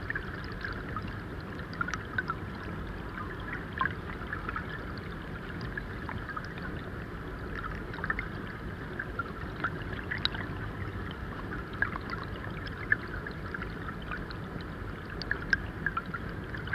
Štadviliai, Lithuania, small dam underwater
water falls sfom the small dam and turns the wheel of the mill. hydrophone just several metres away from the dam